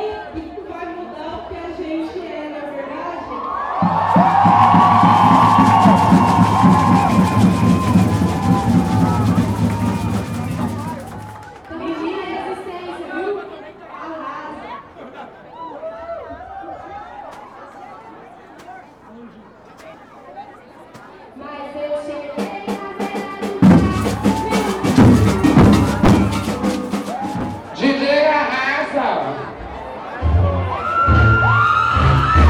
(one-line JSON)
{"title": "Calçadão de Londrina: 1ª Parada LGBT de Londrina - 1ª Parada LGBT de Londrina / 1st LGBT Parade in Londrina", "date": "2017-03-09 15:19:00", "description": "Panorama sonoro: concentração da 1ª Parada LGBT de Londrina no Calçadão com música eletrônica, apresentação de maracatu e o impasse com alguns moradores de prédios ao entorno que tacavam ovos nos participantes, hino nacional e o momento em que a caminhada teve início em direção a Área de Lazer Luigi Borghesi (Zerão). Cerca de 5 mil pessoas participaram da parada.\nSound panorama: concentration of the 1st LGBT Parade of Londrina on the Boardwalk with electronic music, presentation of maracatu and the impasse with some residents of surrounding buildings who tossed eggs at the participants, national anthem and the moment the walk started towards the Luigi Leisure Area Borghesi (Zerão). Around 5 thousand people participated in the parade.", "latitude": "-23.31", "longitude": "-51.16", "altitude": "617", "timezone": "America/Sao_Paulo"}